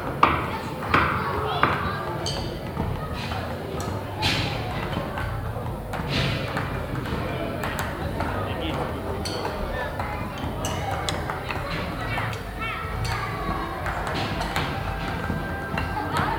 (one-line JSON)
{"title": "luxembourg, carrérotondes, drumtable", "date": "2011-11-07 22:12:00", "description": "Inside CarréRotondes, a culture location with club and theatre hall, during an open public afternoon for kids and parents. The sound of several drum sticks hitting simultaneously on a wooden table with rubber pads as well as voices by kids and parents in a open reverbing hall.\ninternational city scapes - social ambiences and topographic field recordings", "latitude": "49.60", "longitude": "6.12", "altitude": "277", "timezone": "Europe/Luxembourg"}